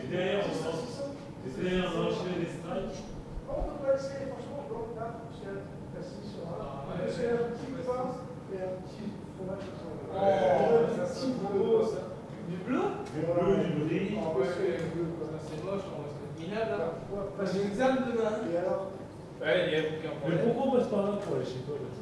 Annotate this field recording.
The ambiance of deep night, silent city noise, some (drunk?) french men approaching under the building passage and proceeding further in the distance. Nice morphing of ambiance from the distance, under the passage, echoes in the square. Recorded with Zoom H4